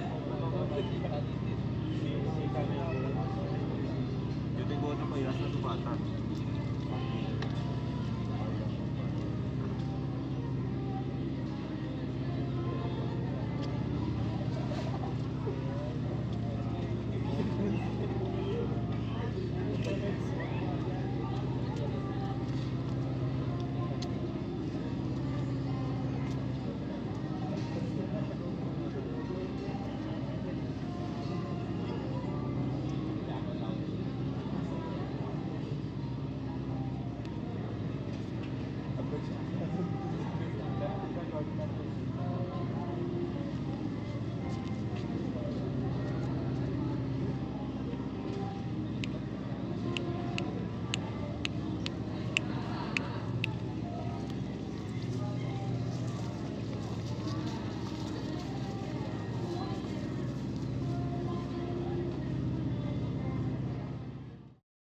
Cra., Bogotá, Colombia - Ambiente Iglesia San Tarcisio
In this ambience you can hear the northern part of Bogotá where you can see the traffic of one of the streets and the busiest race in the Cedritos neighborhood in front of the church.
Región Andina, Colombia, 12 May 2021